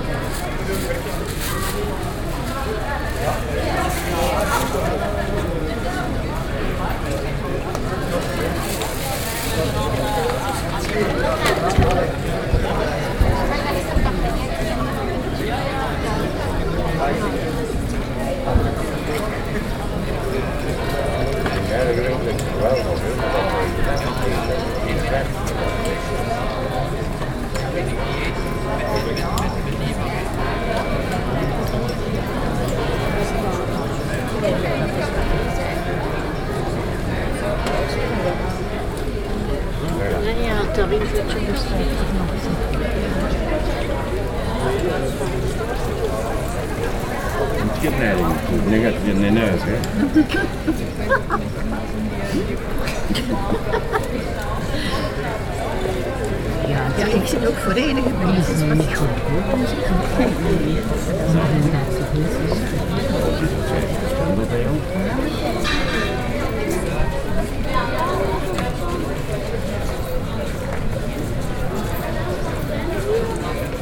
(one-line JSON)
{"date": "2009-08-16 11:26:00", "description": "Hal, flea market.\nSur la brocante de Hal, beaucoup de monde, on y parle flamand, un peu français, le carillon puis les cloches de la majestueuse église Sint-Martinuskerk.", "latitude": "50.74", "longitude": "4.24", "timezone": "Europe/Brussels"}